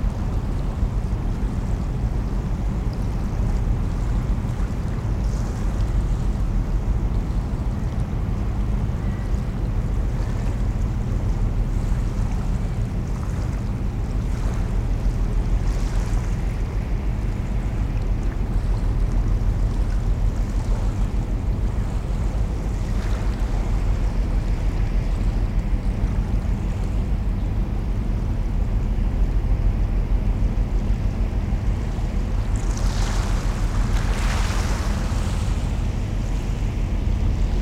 Am Molenkopf, Köln, Deutschland - ships passing
several ships passing by, waves and pebbles
recorded with the microphones only 10 cm from the ground on an Aiwa HD-S1 DAT